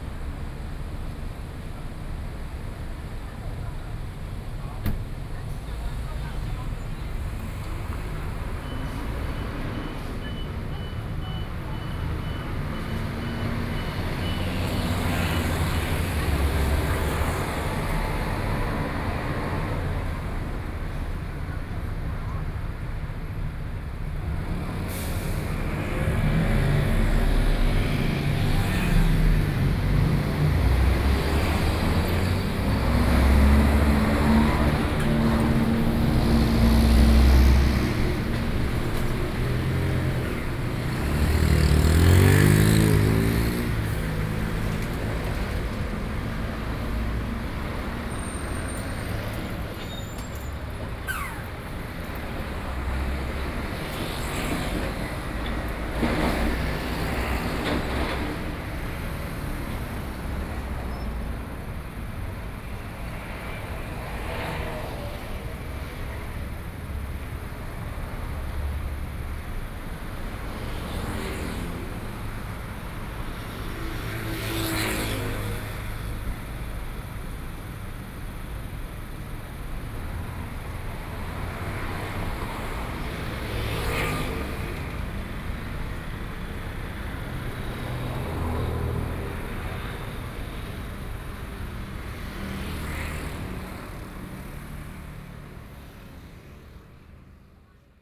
in front of the Convenience store, traffic noise, Sony PCM D50+ Soundman OKM II